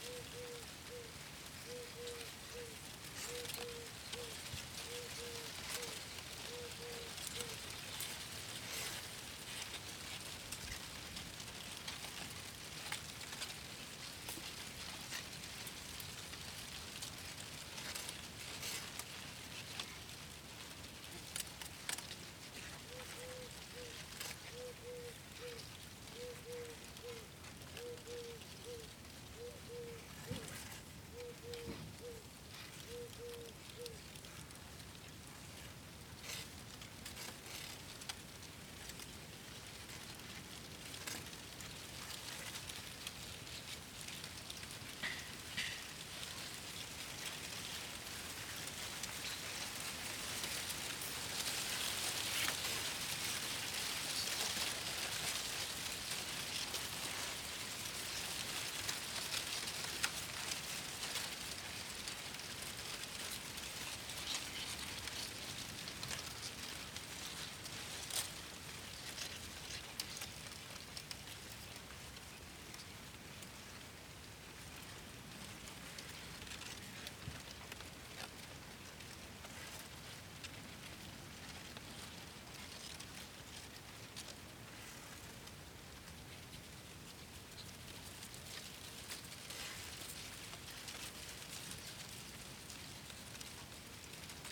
Via Belveduto, Castiglione del Lago, Perugia - Wind in the cornfield, some sounds from a building lot.
[Hi-MD-recorder Sony MZ-NH900 with external microphone Beyerdynamic MCE 82]